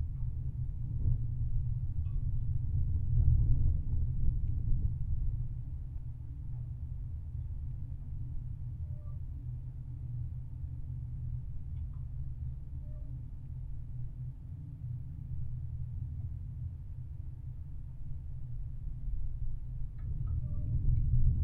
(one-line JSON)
{"title": "Juknenai, Lithuania, lightning rod", "date": "2021-03-05 11:20:00", "description": "Low rumble of lightning rod on building. Contact microphones recording.", "latitude": "55.54", "longitude": "25.90", "altitude": "182", "timezone": "Europe/Vilnius"}